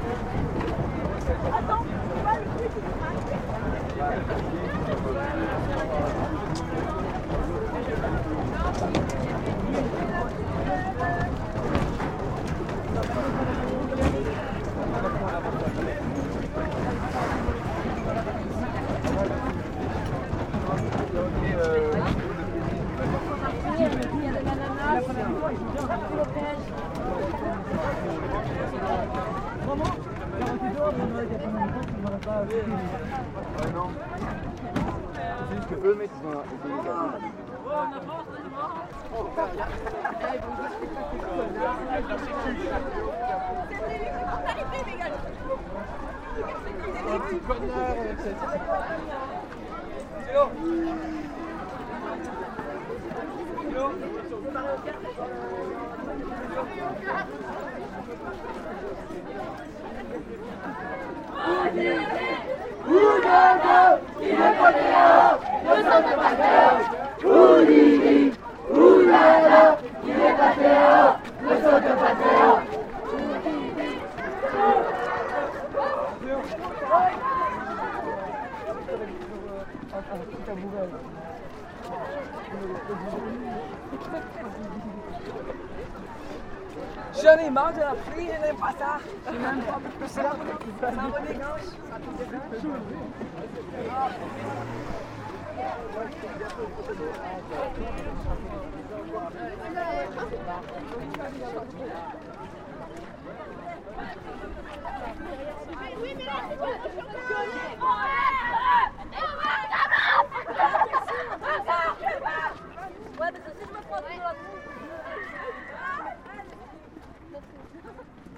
In aim to animate the K8strax, a big scout race, we ordered a complete train, from Ottignies to Mons. 1250 of our scouts arrive in the Mons station. We are doing noise and a lot of passengers are desperate ! During this morning, there's very-very much wind, I had to protect the microphones with strong pop filter.
Mons, Belgium, 21 October 2017